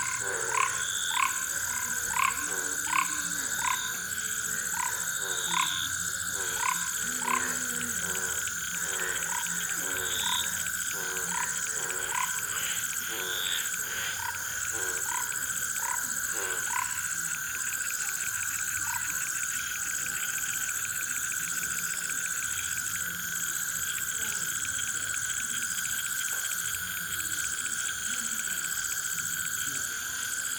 Trom Residence, Ghana - Swamp Chorus, Trom, Ghana.
Binaural Swamp Chorus recorded in a suburb of Koforidua, Ghana in September, 2021.
In the Soundscape:
Human voices in the background.
Unidentified species of toads and frogs in distinctive immersive fields.
The space has reverberant qualities.
Field Recording Gear: Soundman OKM Binaural set with XLR Adapter, ZOOM F4 Field Recorder.
8 September, 20:43